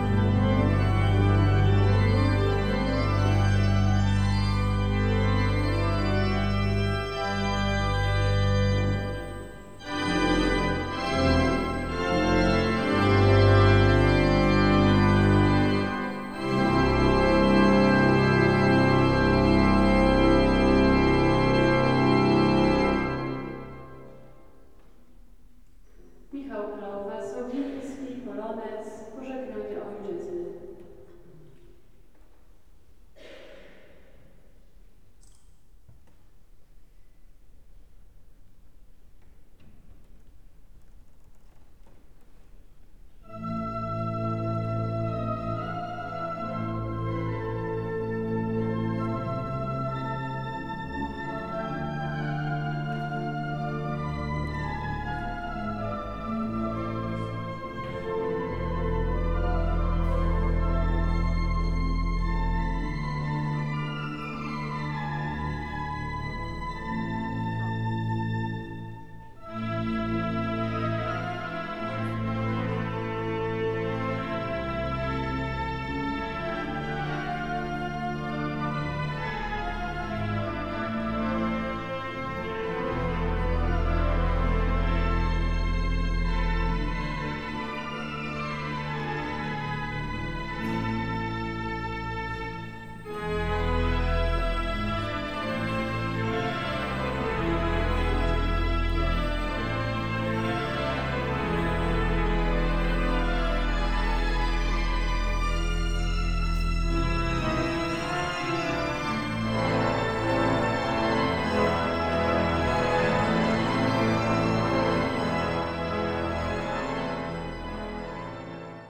Święta Lipka, Poland, concert in church
Church of Our Dear Lady of Święta Lipka, one of the most important examples of Baroque architecture in modern Poland. Also known for its grand organ.